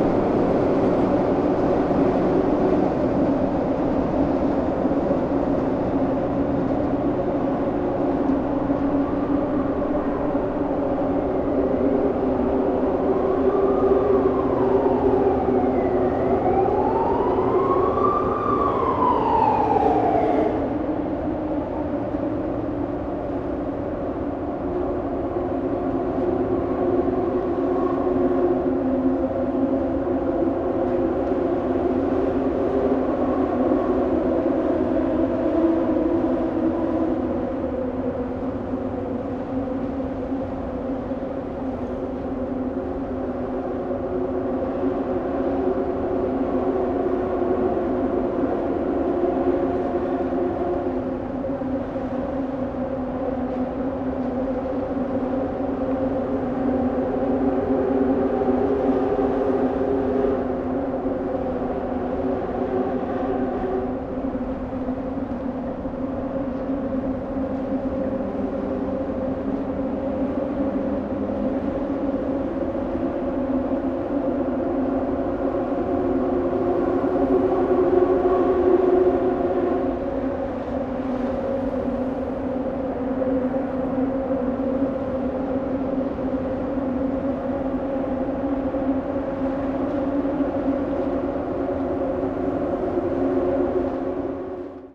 Lisbon, Ponte 25 de Abril - under bridge, traffic
under bridge at river tejo. cars run over metal grades, train tracks below. incredible soundscape.
Lisbon, Portugal, 3 July 2010, 13:55